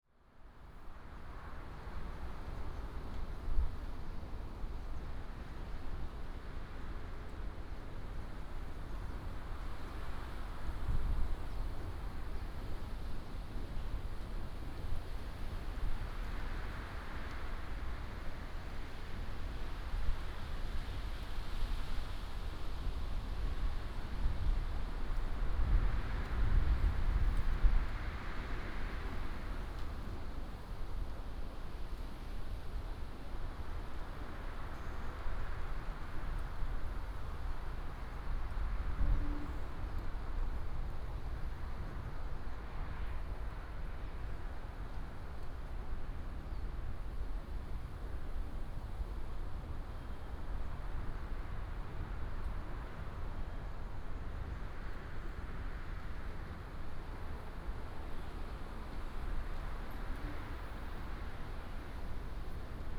wind, rest area, Binaural recordings, Sony PCM D100+ Soundman OKM II
Miaoli County, Zhunan Township